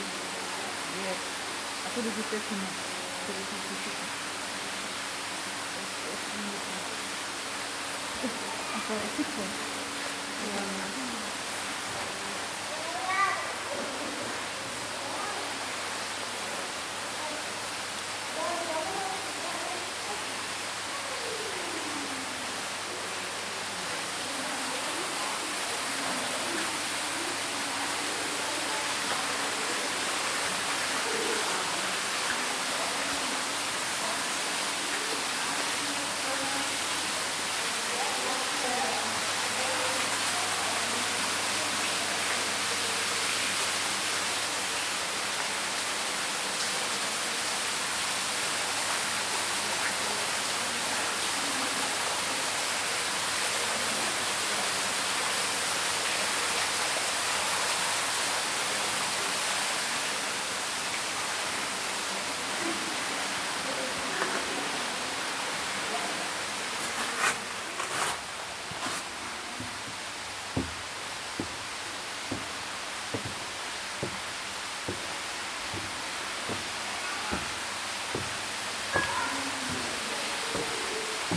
Hanuschgasse, Wien, Österreich - Schmetterlinghaus
visit of the Butterfly Greenhouse, Burggarten, Vienna.
25 January 2022